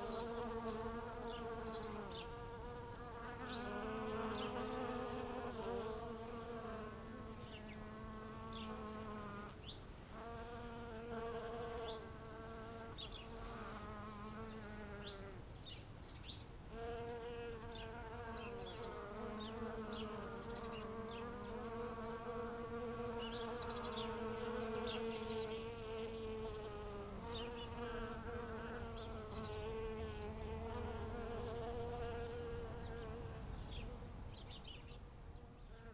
Kirchmöser Ost - bees collecting from Rucola / rocket blossoms
Garden, Kirchmöser, rocket (Rucola) all over the ground, bees are collecting nectar from the blossoms
(Sony PCM D50)
Brandenburg, Deutschland, 21 August 2022, 08:55